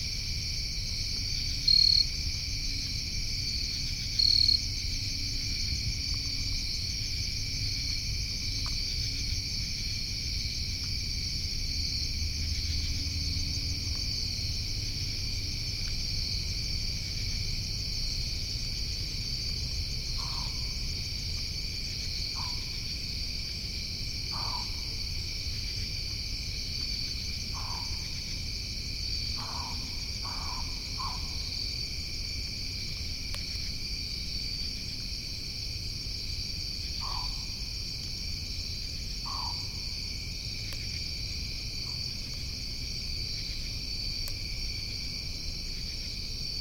Midnight forest-field setting. Deer hisses at meas I am nearby. Crickets, katydids chant. A pine barrens tree frog can be faintly heard honking in the distance (headphones for that). Something is crunching near my placed recorder. I believe this may be a turtle.
Millville, NJ, USA